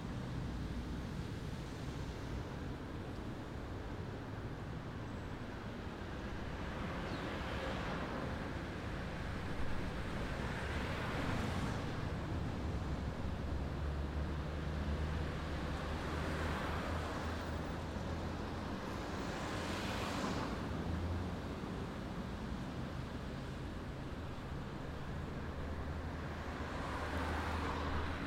R. Dr. Almeida Lima - Brás, São Paulo - SP, 03164-000, Brasil - captação de campo aps